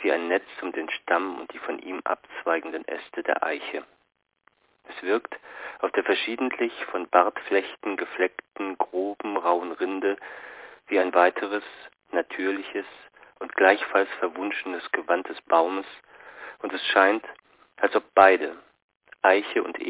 moulin d´avoine, eiche/efeu - eiche/efeu - hsch ::: 27.03.2007 22:05:59
France